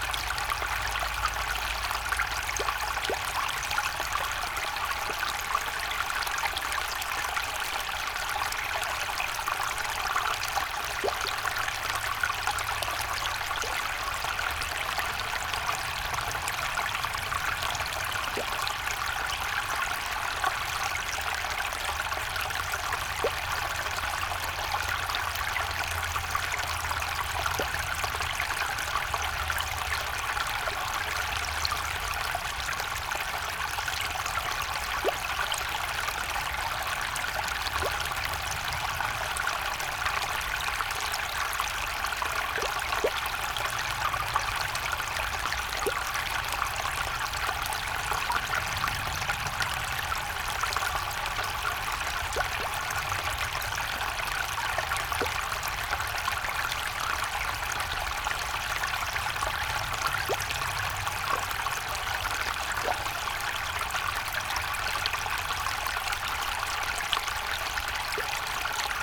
Berlin Buch - Zick-Zack-Graben, ditch drins into water pipe
Water from ditch drains into canal pipe, which ensures eco-connectivity between habitats. Distant drone of the nearby Autobahn
The Moorlinse pond is drained by the zigzag ditch (Zick-Zack-Graben) after snowmelt or heavy rainfall to such an extent that the road next to it is no longer flooded. In 2009, a sill was completed at the beginning of the ditch so that, on the other hand, complete drainage of the Moorlinse can no longer occur. The ditch itself, as part of the former Rieselfelder drainage system, first runs off the Moorlinse to the southwest and, after its namesake zigzag course, flows into the "Graben 1 Buch", which in turn flows into the Lietzengraben.
(Sony PCM D50)
Deutschland, 23 January 2022